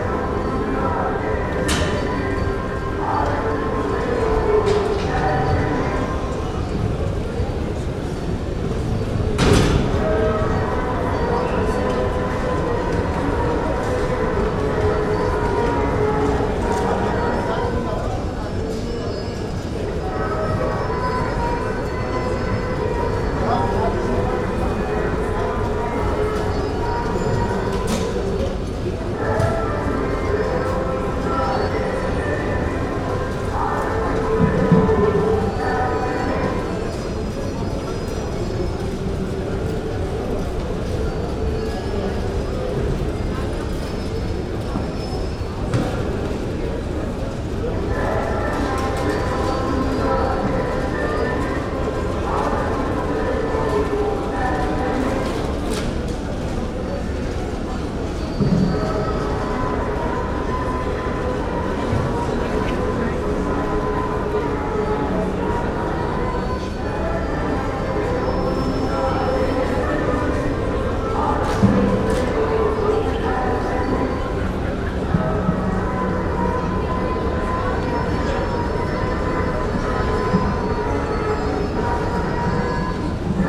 A Beyoglu cleaning truck, playing its typical song, then strangely repeating it at a different pitch.
10 June 2007, 16:30